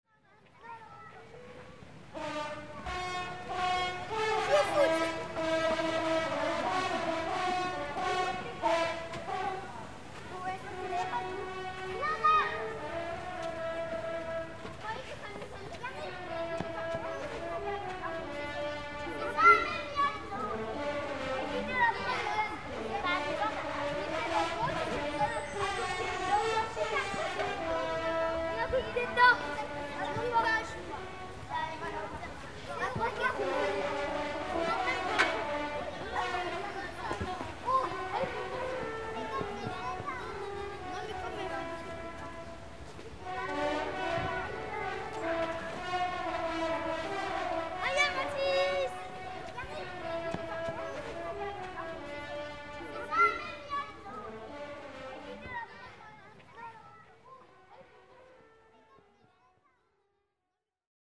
Montmartre, Paris, France - Park Hunting Horn and Kids [Montmartre]
Derrière le sacré-cœur dans un petit parc.Un joueur de cor de
chasse s'entraine.Des enfants jouent.
Park ambiance.hunting horn player training . Kids playing
.Afternoon
3 October 2013, ~16:00